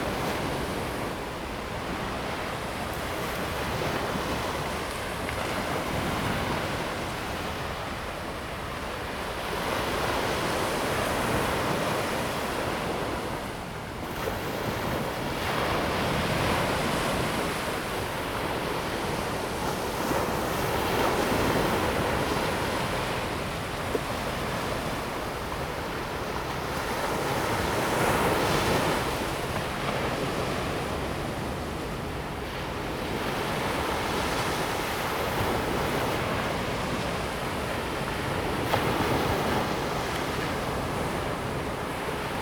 淡水, Tamsui District, New Taipei City - the waves
On the beach, Sound of the waves
Zoom H2n MS+XY
New Taipei City, Taiwan